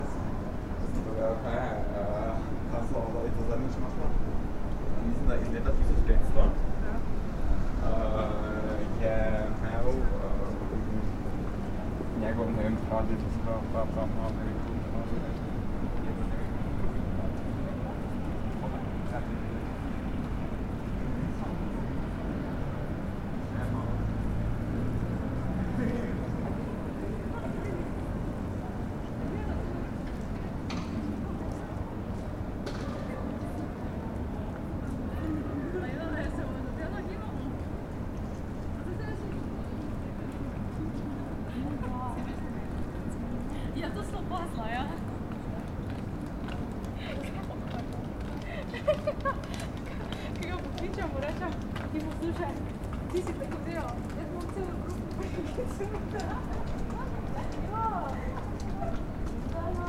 {"title": "Ulica slovenske osamosvojitve, Maribor, Slovenia - corners for one minute", "date": "2012-08-20 18:34:00", "description": "one minute for this corner: Ulica slovenske osamosvojitve", "latitude": "46.56", "longitude": "15.65", "altitude": "272", "timezone": "Europe/Ljubljana"}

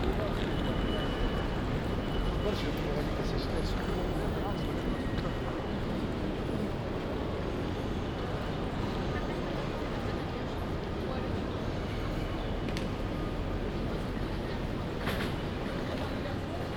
{"title": "Paris soundwalks in the time of COVID-19 - Tuesday afternoon soundwalk in Paris in the time of COVID19: Soundwalk", "date": "2020-10-13 15:24:00", "description": "\"Tuesday afternoon soundwalk in Paris in the time of COVID19\": Soundwalk\nTuesday, October 13th 2020: Paris is scarlett zone fore COVID-19 pandemic.\nRound trip walking from airbnb flat to Gare du Nord and back.\nStart at:3:24 p.m. end at 4:24 p.m. duration 59’53”\nAs binaural recording is suggested headphones listening.\nBoth paths are associated with synchronized GPS track recorded in the (kmz, kml, gpx) files downloadable here:\nFor same set of recordings go to:", "latitude": "48.88", "longitude": "2.37", "altitude": "61", "timezone": "Europe/Paris"}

